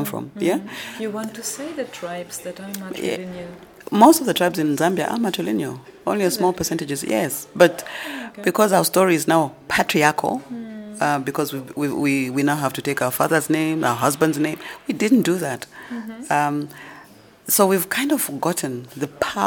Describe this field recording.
The recording with Mulenga Kapwepwe took place in the busy offices of the National Arts Council of Zambia in Lusaka, which underscores Mulenga’s stories with a vivid soundtrack; even the Lusaka-Livingstone train comes in at a poignant moment. The interview is a lucky opportunity to listen to Mulenga, the artist, poet, author, researcher, playwright and storyteller she is. She offers us an audio-tour through a number of her stage productions, their cultural backgrounds and underlying research. Mulenga Kapwepwe is the chairperson of the National Arts Council Zambia (NAC), sits on numerous government and international advisory panels, and is the Patron of a number of national arts and women organisations.